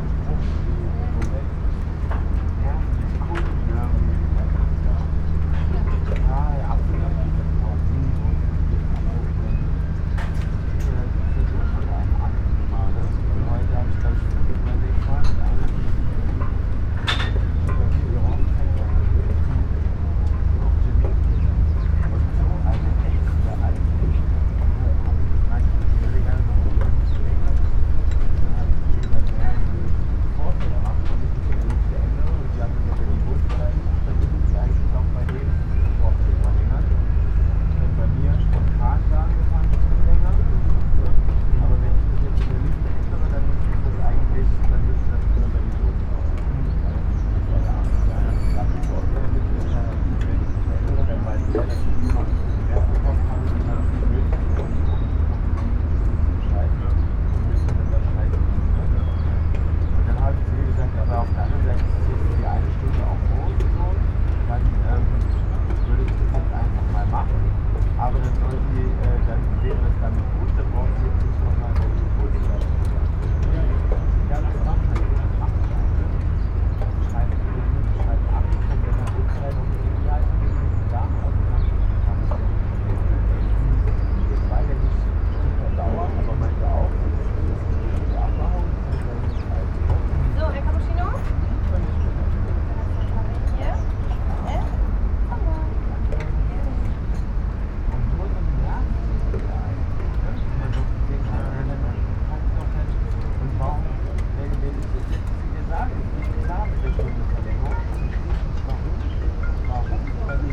river ship deck, Märkisches Ufer, Berlin, Germany - still sitting, listening
river Spree, lapping waves
Sonopoetic paths Berlin